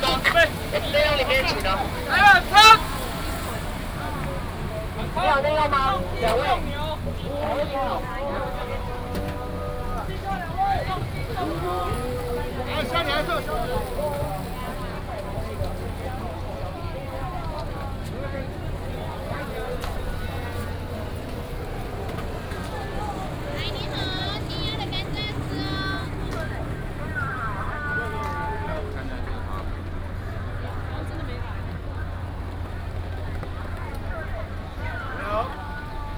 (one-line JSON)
{"title": "慈雲路夜市, East Dist., Hsinchu City - Walking in the night market", "date": "2017-10-06 18:46:00", "description": "Walking in the night market, traffic sound, vendors peddling, Binaural recordings, Sony PCM D100+ Soundman OKM II", "latitude": "24.79", "longitude": "121.01", "altitude": "63", "timezone": "Asia/Taipei"}